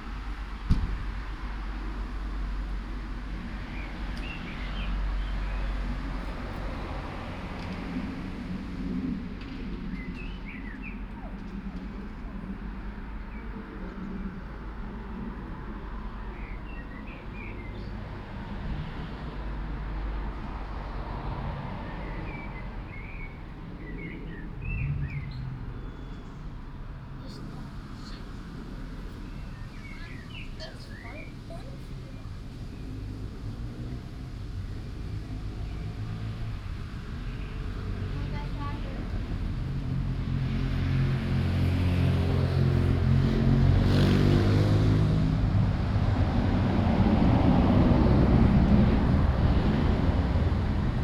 {"title": "Kiel, Deutschland - Fast food restaurant patio", "date": "2017-05-07 18:35:00", "description": "Spring, Sunday evening, fast food restaurant patio on a busy street. Traffic noise, birds, a few people in a distance. Binaural recording, Soundman OKM II Klassik microphone with A3-XLR adapter, Zoom F4 recorder.", "latitude": "54.35", "longitude": "10.10", "altitude": "17", "timezone": "Europe/Berlin"}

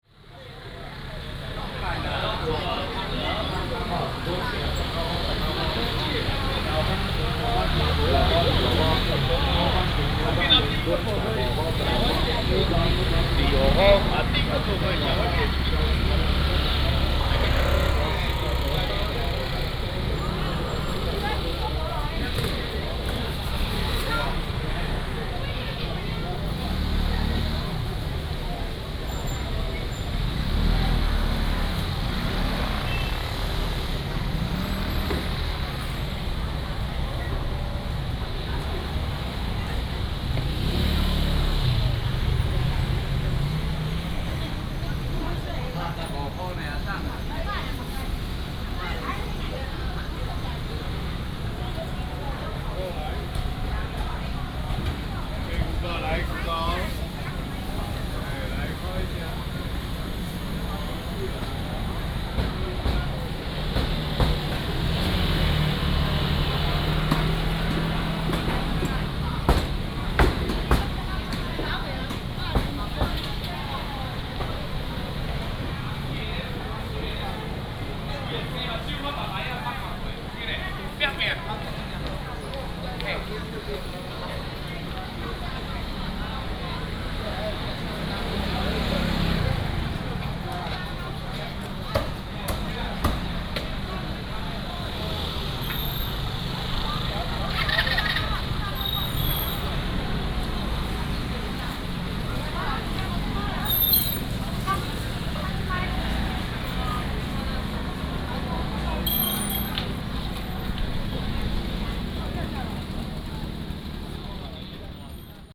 {"title": "Renhua Rd., Hemei Township - Walking in the market of many motorcycles", "date": "2017-02-15 09:06:00", "description": "Walking in the market of many motorcycles", "latitude": "24.11", "longitude": "120.50", "altitude": "15", "timezone": "GMT+1"}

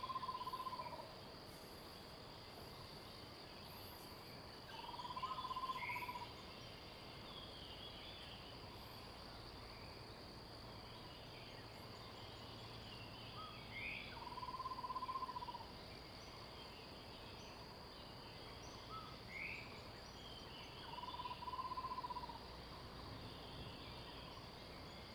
{"title": "Shuishang Ln., Puli Township - Bird sounds", "date": "2016-04-19 05:26:00", "description": "early morning, Bird sounds\nZoom H2n MS+XY", "latitude": "23.94", "longitude": "120.92", "altitude": "514", "timezone": "Asia/Taipei"}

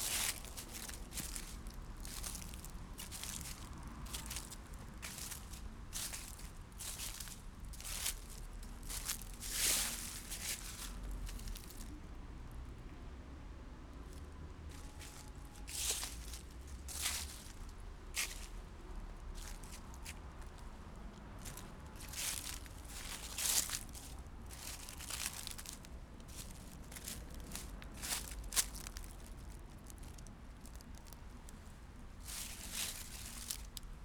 {"title": "Casa del Reloj, dry leaves", "date": "2010-11-21 13:43:00", "description": "walking over cruncy dry leaves", "latitude": "40.39", "longitude": "-3.70", "altitude": "576", "timezone": "Europe/Madrid"}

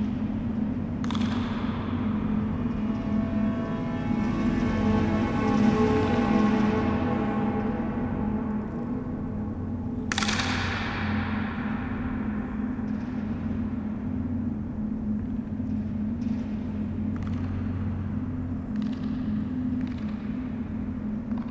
{"title": "Teufelsberg, improvisational session in the sphere 1", "date": "2010-02-04 13:29:00", "description": "open improvisation session at Teufelsberg on a fine winter day with Patrick, Natasha, Dusan, Luisa and John", "latitude": "52.50", "longitude": "13.24", "altitude": "113", "timezone": "Europe/Tallinn"}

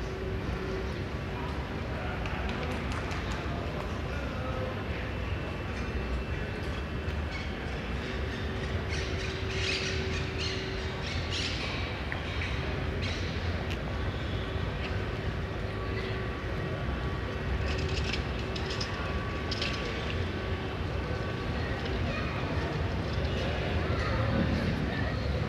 {"title": "Plaça Reial, Barcelona, Catalunya - Voices in Plaça Reial", "date": "2009-02-06 14:35:00", "description": "Voices in Plaza Real", "latitude": "41.38", "longitude": "2.18", "altitude": "20", "timezone": "Europe/Madrid"}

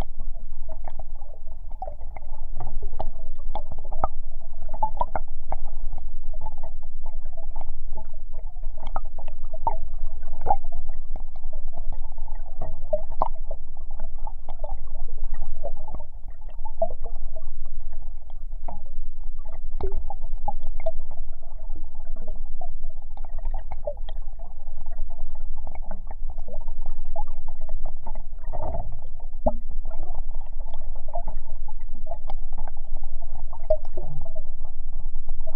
{"title": "Utena, Lithuania, the pipe", "date": "2022-03-27 18:05:00", "description": "Metallic pipe - a part of improvised bridge - listened through geophone.", "latitude": "55.51", "longitude": "25.62", "altitude": "109", "timezone": "Europe/Vilnius"}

